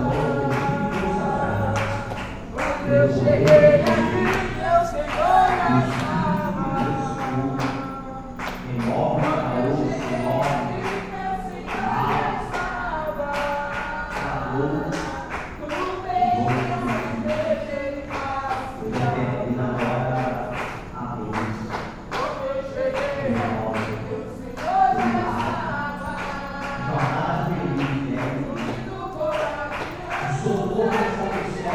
Numa esquina de Cachoeira às 18h ouve-se duas igrejas evangélicas, um pastor prega, a outra canta.
Two evangelical churches singing and praying.
Cachoeira - BA, Brazil, 29 May 2016, 18:20